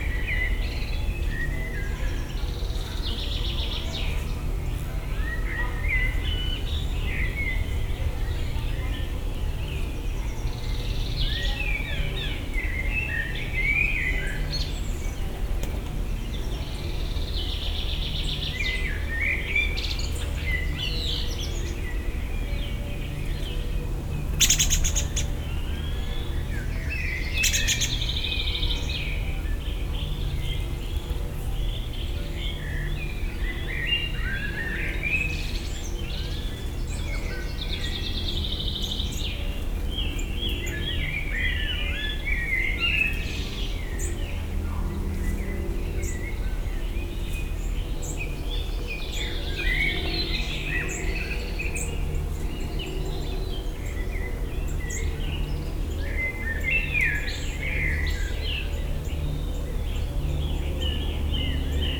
Waldpark, Mannheim, Deutschland - Vögel und die Glocken
Waldpark, dichter Laubwald, buntes Treiben der Vögel, Kirchenglocken laden zum Samstagabend-Gottesdienst ein